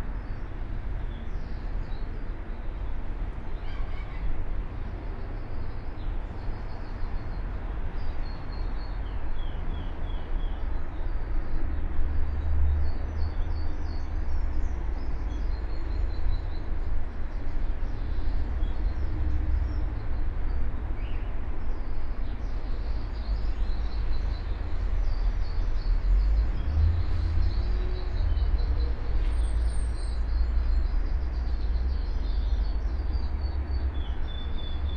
Cressingham Rd, Reading, UK - Reading Buddhist Priory Garden Ambience
Ten minute ambience of Reading Buddhist Priory's garden (Spaced pair of Sennheiser 8020s + SD MixPre6)